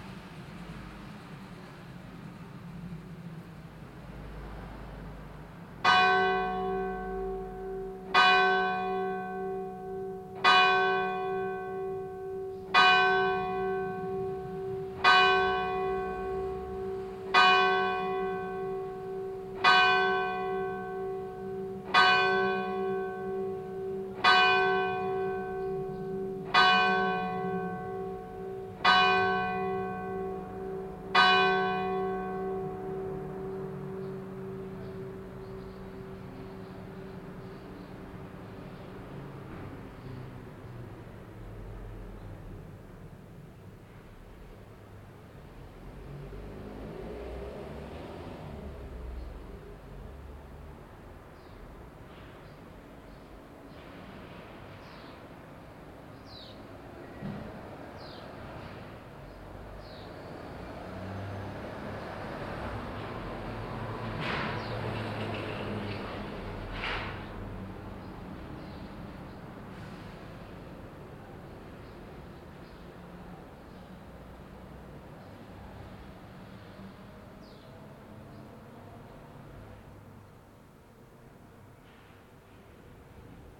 Ven. de la Geôle, Sauveterre-la-Lémance, France - Bells at 12.00 – Cloches de midi.
Insectes, oiseaux (hirondelles et pigeons) voitures distantes, cloches.
Insects, birds (sparrows and pigeons) distant cars, bells.
Tech Note : SP-TFB-2 binaural microphones → Sony PCM-M10, listen with headphones.